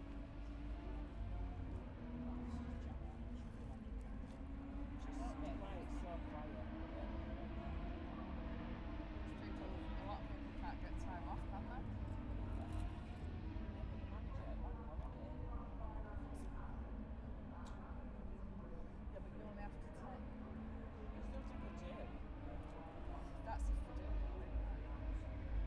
Towcester, UK - british motorcycle grand prix 2022 ... moto two ...
british motorcycle grand prix 2022 ... moto two ... free practice one ... dpa 4060s on t bar on tripod to zoom f6 ...
West Northamptonshire, England, United Kingdom, 5 August 2022, 10:49